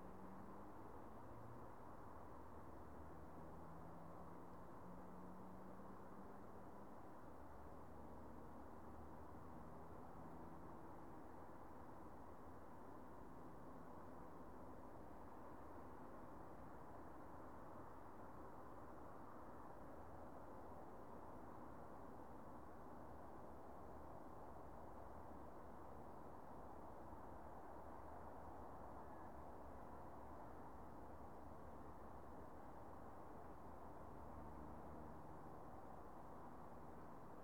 Bluebell Road, Southampton, UK - 005 Cars passing at night